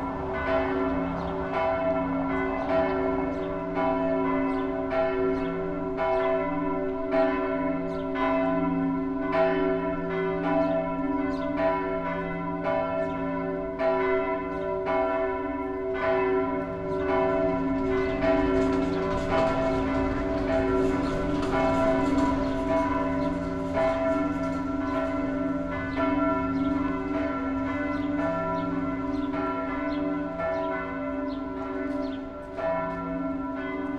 Went by the church and heard the bells loud and clear.
It was a beautiful sunny day, with almost no wind.
A lot of the locals and turist alike walking arround in the city.
Recorded with a Sony PCM D100 about 50 meters from the belltower.
sadly there is some traffic and other noice on the recording, but again, that is how it sounds in this spot.
Send me an email if you want it
Bergen, Norway, 18 May 2018, 16:00